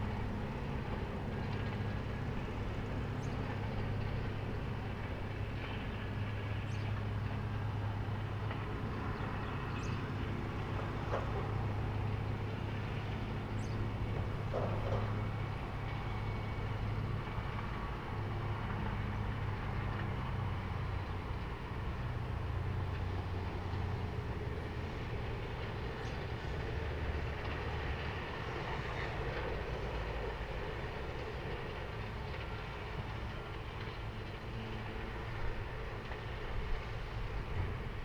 Ta'Zuta quarry, Dingli, Is-Siġġiewi, Malta - quarry ambience
Ta'Zuta quarry, operates a ready mixed concrete batching plant and a hot asphalt batching plant, ambience from above
(SD702, DPA4060)